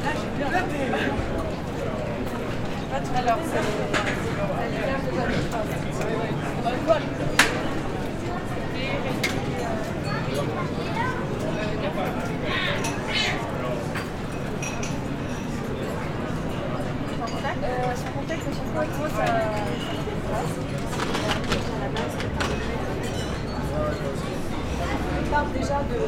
Pl. Camille Jullian, Bordeaux, France - Pl. Camille Jullian
Pl. Camille Jullian ambiance, atmosphere
Captation ZOOMH6